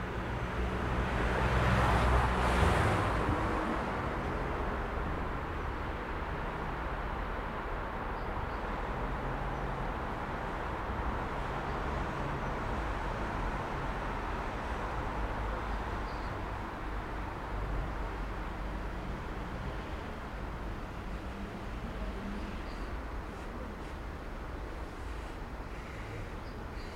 Zietenring, Wiesbaden, Deutschland - St. Elisabeth Kirche